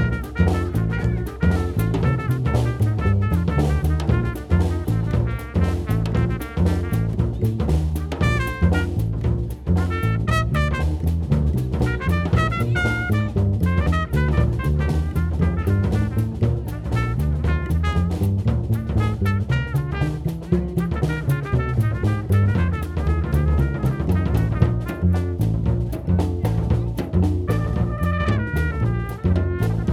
Borov gozdicek, Nova Gorica, Slovenia - Koncert, Trio slučaj
Trio Slučaj sestavljajo Urban Kušar, Francesco Ivone in Matjaž Bajc.